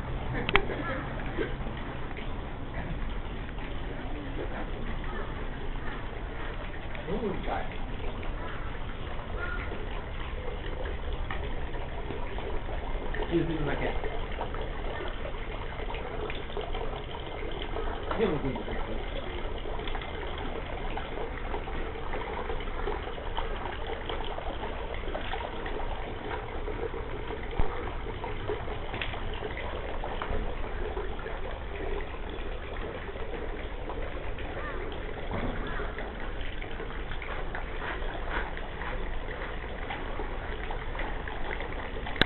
ritual handcleaning infront of meiji temple 3 p.m
Tokyo, Shibuya, Yoyogikamizonocho